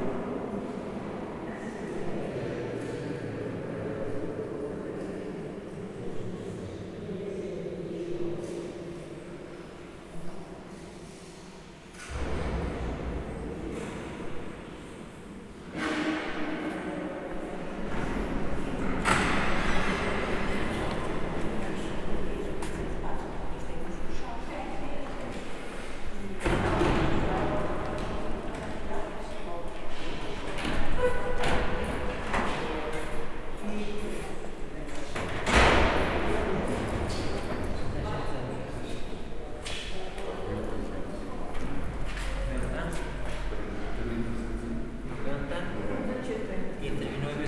Sé, Guarda Municipality, Portugal - Sé da Guarda
Sé da Guarda (cathedral), resonant space, people talking and walking, stereo, zoom h4n
29 June, ~15:00